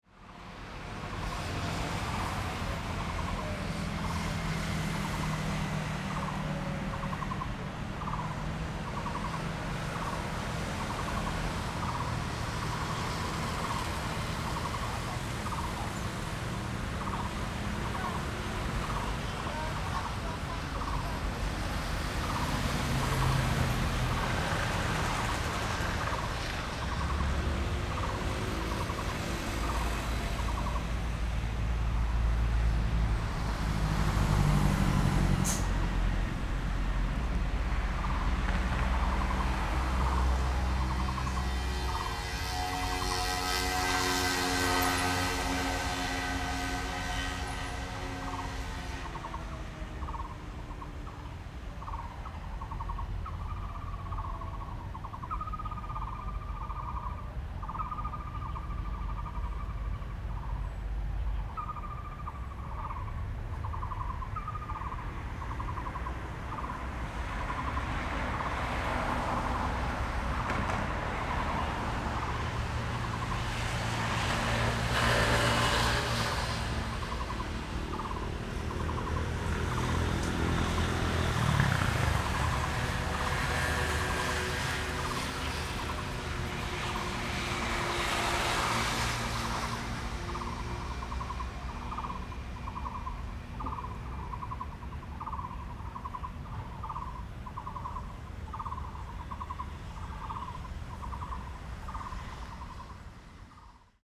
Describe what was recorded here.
In the park, bird and Traffic Noise, Rode NT4+Zoom H4n